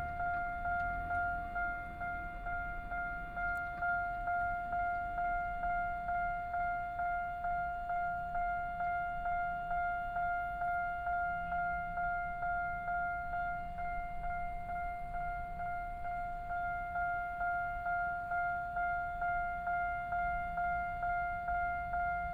Traffic Sound, In the railway level crossing, Trains traveling through, Small village
Sony PCM D50+ Soundman OKM II
26 July 2014, 18:49